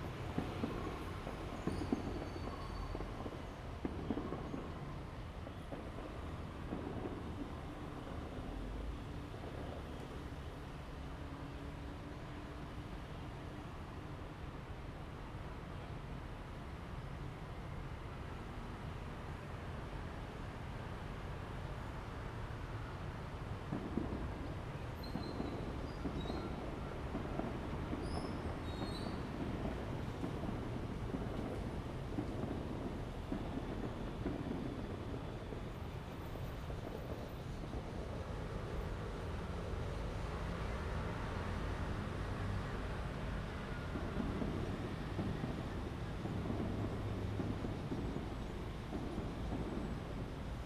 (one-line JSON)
{"title": "同心園, 苗栗市 Miaoli City - Next to the tracks", "date": "2017-03-26 16:33:00", "description": "The train runs through, Next to the tracks, Fireworks sound, Bird call, Dog sounds\nZoom H2n MS+XY", "latitude": "24.56", "longitude": "120.82", "altitude": "53", "timezone": "Asia/Taipei"}